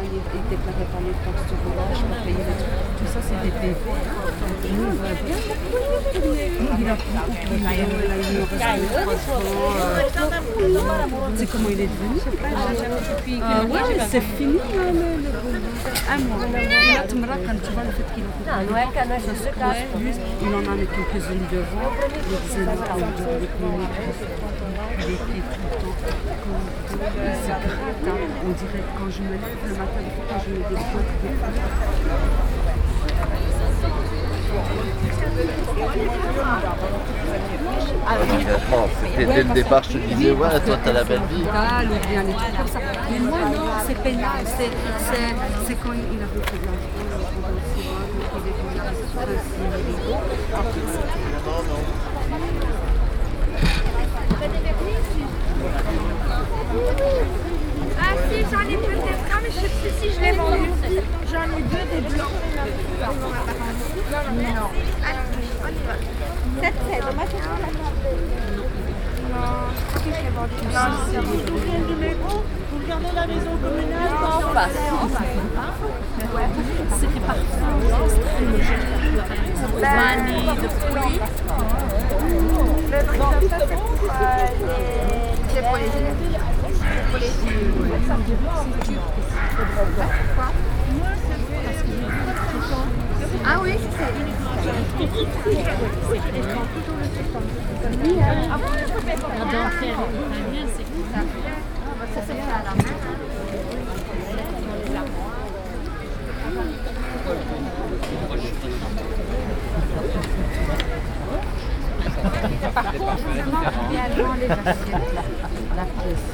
Brussels, Place Van Meenen, Brocante - Flea Market.